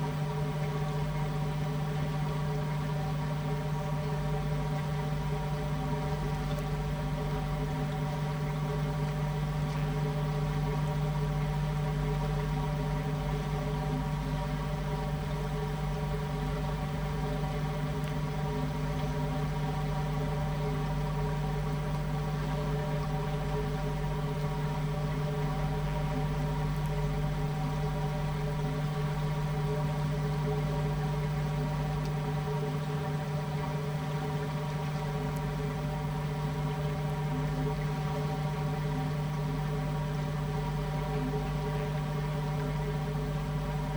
Vilnius, Lithuania, pipe by the river
small microphones in the pipe by the river. additional channel: electromagnetic antenna Priezor